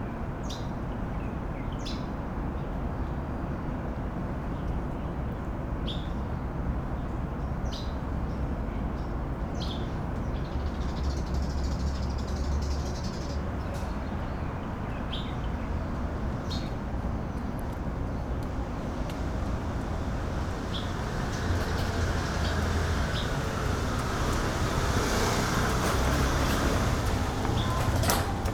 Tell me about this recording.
Morning in the river, Birds singing, Traffic Sound, Binaural recordings, Sony PCM D50 +Soundman OKM II